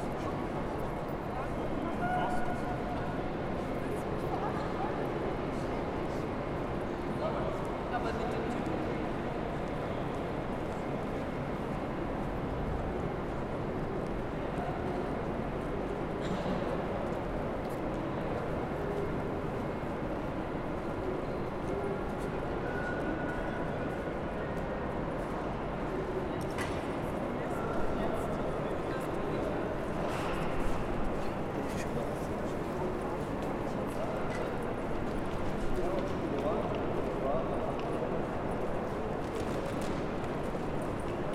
In the hall on the 24th of April there are more voices than the last time, more people are going through the hall into the station. Is this audible? In the end of this recording a beggar is asking for money. I will meet him again at the airport, something like one hour later...
Hessen, Deutschland, 24 April, 15:30